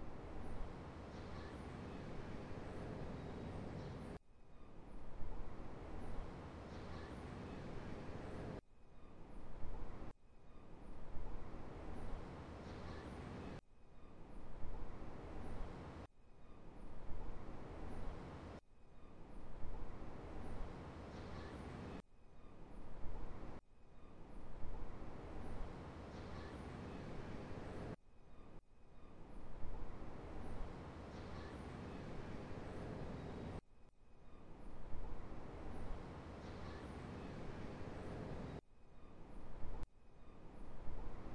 Cabo Branco, João Pessoa - Paraíba, Brésil - Cabo Branco, Spring Sunday Morning
Cabo Branco, Spring (October), Sunday morning. Recorded from my varando at ca 50 m. from Avenida Cabo Branco and seaside.
João Pessoa - Paraíba, Brazil, 28 October 2012, 07:00